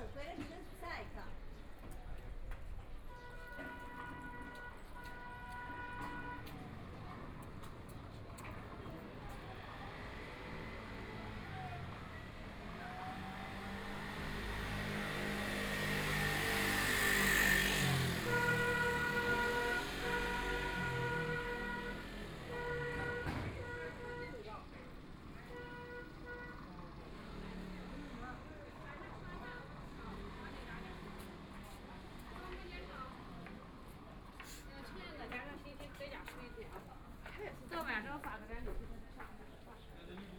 Anren Street, Shanghai - soundwalk
Walking inside the old neighborhoods, Binaural recording, Zoom H6+ Soundman OKM II
Shanghai, China, 25 November 2013